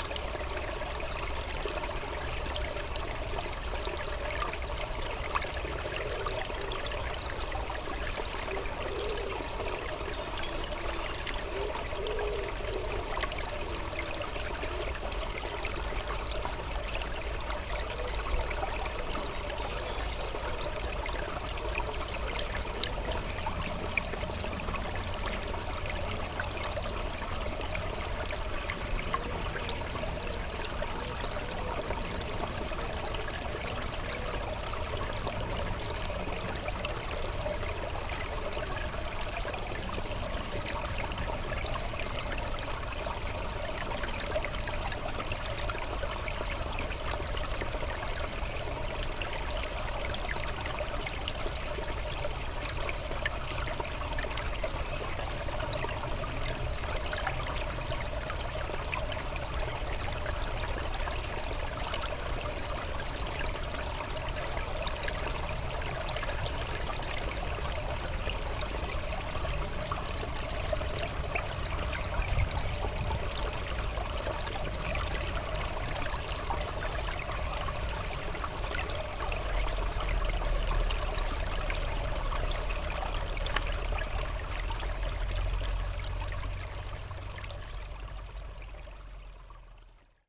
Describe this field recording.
The river "Malaise", in the woods called Bois des Rêves. Two doves talking, planes and a quiet river.